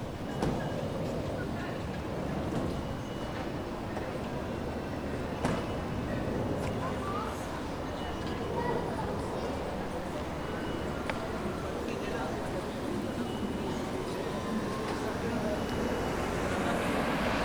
Rue de la République, Saint-Denis, France - Marché St Denis (no market)
This recording is one of a series of recording, mapping the changing soundscape around St Denis (Recorded with the on-board microphones of a Tascam DR-40).